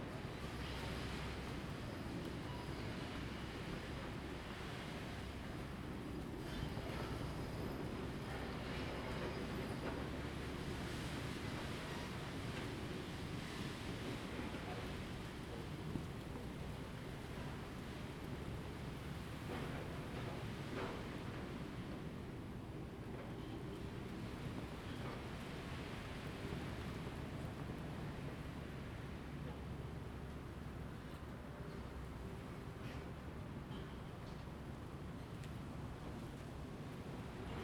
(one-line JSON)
{"title": "池東村, Xiyu Township - at the Roadside", "date": "2014-10-22 15:42:00", "description": "Small village, Roadside, Construction\nZoom H2n MS+XY", "latitude": "23.60", "longitude": "119.51", "altitude": "28", "timezone": "Asia/Taipei"}